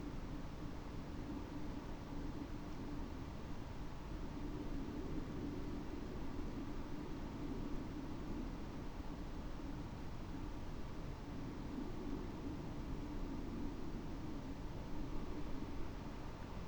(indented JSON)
{
  "title": "Puerto Yartou, Región de Magallanes y de la Antártica Chilena, Chile - storm log - puerto yartou breeze",
  "date": "2019-03-12 11:09:00",
  "description": "Light breeze at Poerto Yartou shore, wind SW 2 km/h.\nThe son of Swiss immigrants, Alberto Baeriswyl Pittet was founding in 1908 the first timber venture in this area: the Puerto Yartou factory.",
  "latitude": "-53.89",
  "longitude": "-70.14",
  "altitude": "7",
  "timezone": "America/Punta_Arenas"
}